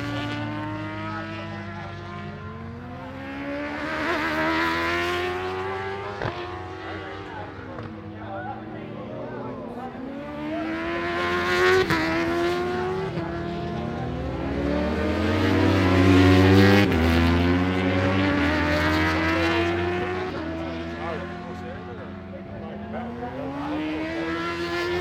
Unit 3 Within Snetterton Circuit, W Harling Rd, Norwich, United Kingdom - British Superbikes 2006 ... superbikes qualifying ...
british superbikes 2006 ... superbikes qualifying ... one point stereo mic to mini disk ...